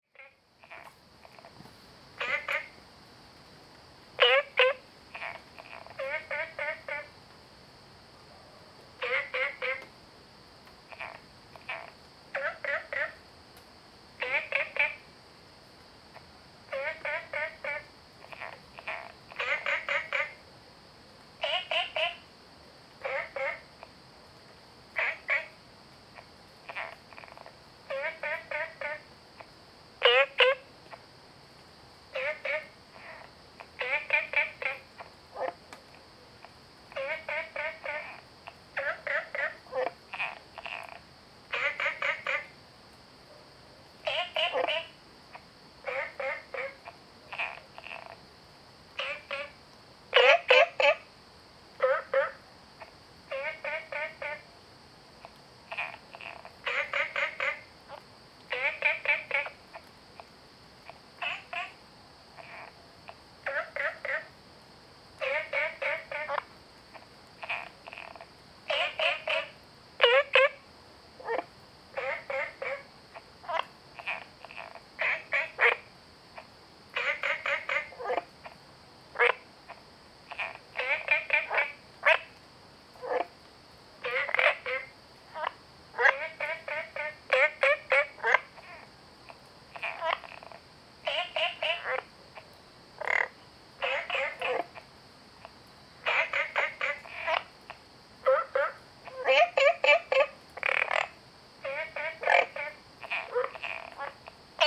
{"title": "woody house, 埔里鎮桃米里 - Frogs chirping", "date": "2015-09-03 04:39:00", "description": "Frogs chirping, Ecological pool\nZoom H2n MS+XY", "latitude": "23.94", "longitude": "120.92", "altitude": "495", "timezone": "Asia/Taipei"}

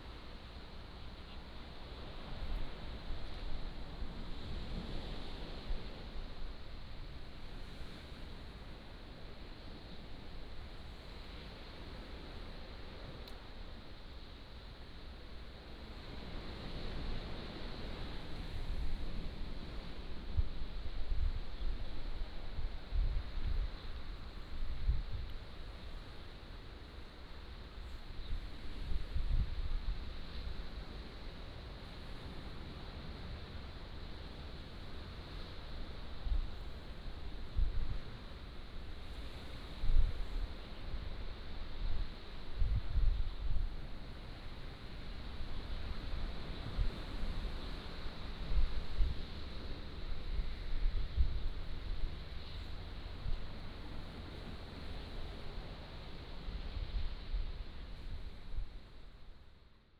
{"title": "白馬尊王廟, Beigan Township - In temple square", "date": "2014-10-13 15:13:00", "description": "In temple square, Sound of the waves", "latitude": "26.21", "longitude": "119.97", "altitude": "22", "timezone": "Asia/Taipei"}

